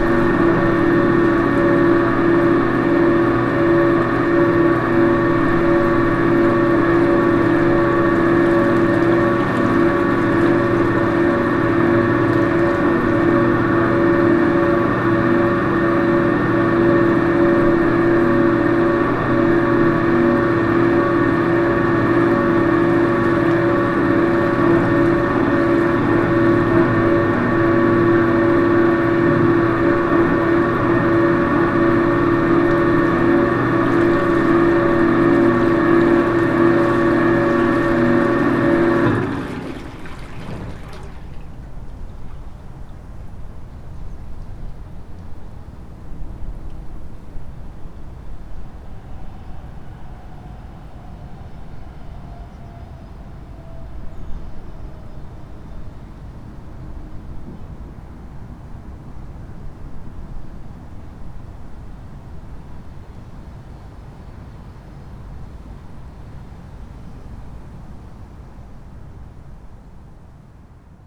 concrete grey (recycling) water basin with agitator
sonic exploration of areas affected by the planned federal motorway a100, berlin.
january 2014
Deutschland, European Union, 9 January 2014